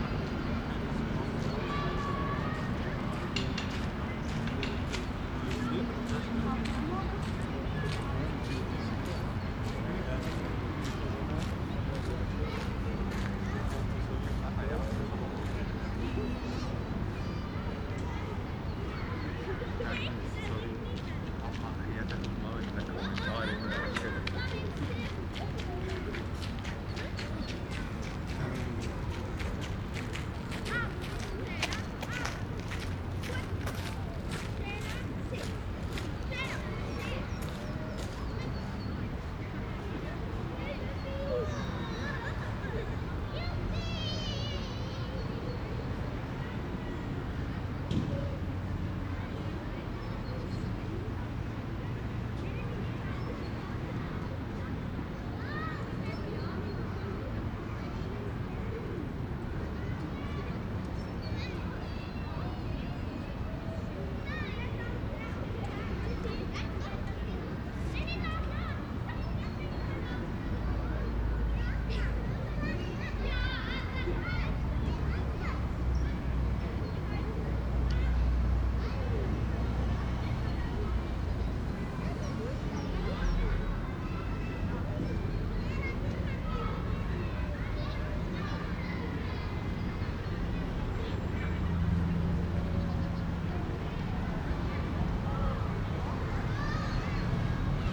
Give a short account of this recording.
Sunny winter day. Lot of people out in the parc, children playing to the left. Street traffic in the back. Microphones: MKH50/MKH30 in MS-stereo configuration in Rode Blimp, Recorder: zoom F8